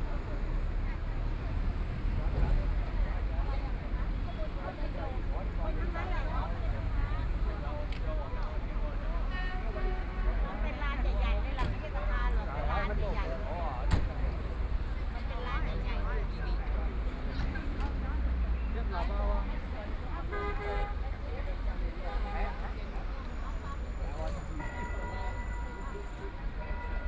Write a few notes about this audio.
At the intersection, Traffic Sound, Bell tower, Ship's whistle sound, Very many people and tourists, Binaural recording, Zoom H6+ Soundman OKM II